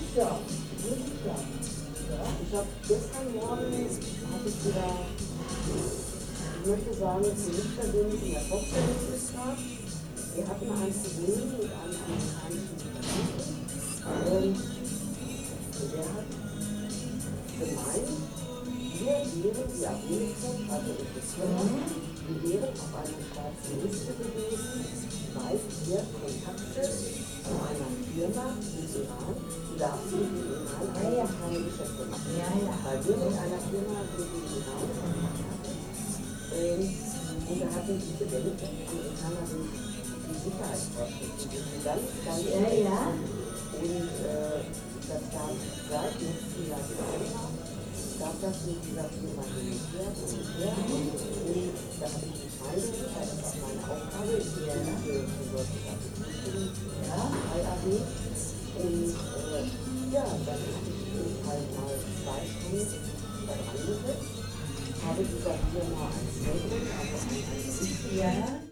isenbeck-deele - internationales restaurant isenbeck-deele, hamm
internationales restaurant isenbeck-deele